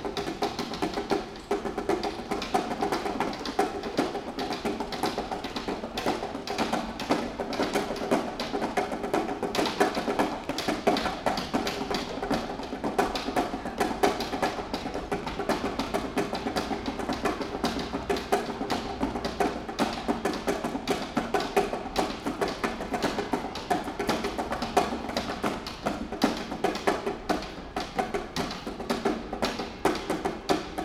Guimarães, square at Rua Paio Galvão - seat drumming
a few guys drumming on a metal boxes/seats/benches, located randomly on the yard of an art school or gallery.
Guimaraes, Portugal, 2 October 2013